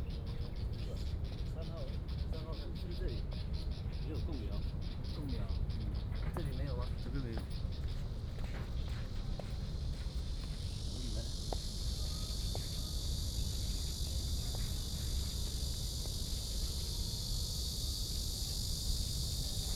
頭城鎮港口里, Yilan County - next to the beach
In the woods next to the beach, Cicadas sound, Sound of the waves, Very hot weather, Traffic Sound